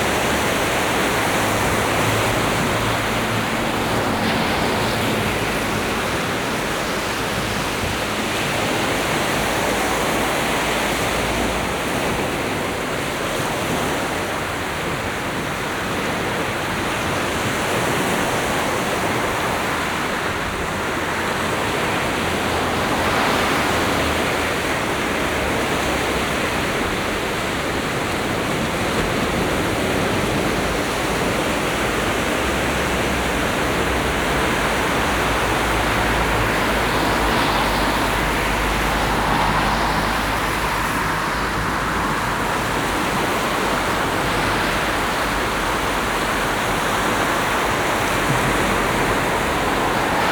Scarborough, UK - Autumn, South Bay, Scarborough, UK
Binaural field recording part of a set which seeks to revel seasonal morphology of multiple locations within Scarborough.
13 October 2012, 06:40